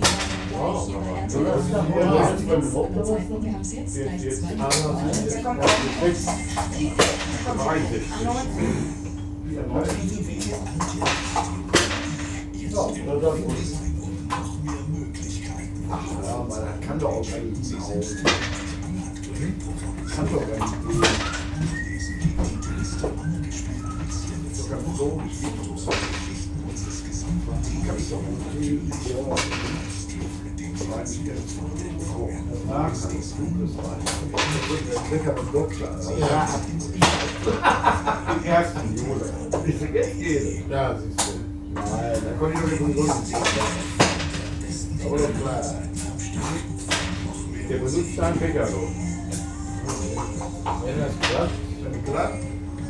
Dahlhausen, Bochum, Deutschland - gaststätte heinrichsbauer
gaststätte heinrichsbauer, kassenberger str. 16, 44879 bochum
Bochum, Germany, 17 May